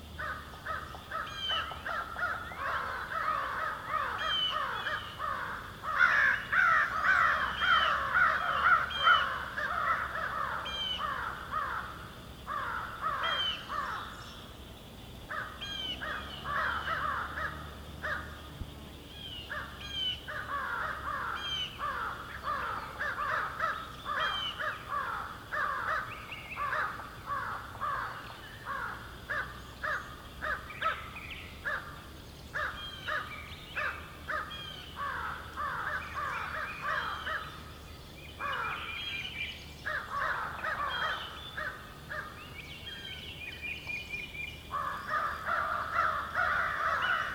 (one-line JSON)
{"title": "Bolivar, USA - Countryside spring in Missouri, USA", "date": "2013-05-07 12:00:00", "description": "A group of crow is singing in the wood, some birds and insect in the countryside, a road in the background sometimes. Sound recorded by a MS setup Schoeps CCM41+CCM8 Sound Devices 788T recorder with CL8 MS is encoded in STEREO Left-Right recorded in may 2013 in Missouri, close to Bolivar (and specially close to Walnut Grove), USA.", "latitude": "37.82", "longitude": "-93.66", "altitude": "259", "timezone": "America/Chicago"}